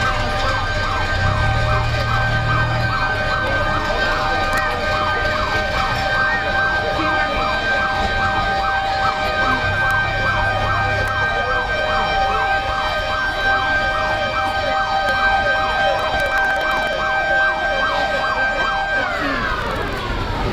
Bogota, San Victorino
San Victorino es el supermall de los pobres... en todo el centro de bogotá es un foco de resistencia comercial..encuentras todo de todo...